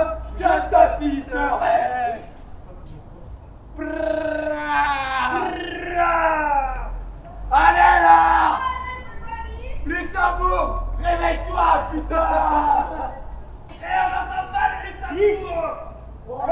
luxembourg, rue d'amsterdam, youth at night
In the night. The sound of a group of young people strolling by singing and shouting enjoying themselves. A car passing by.
international city scapes - topographic field recordings and social ambiences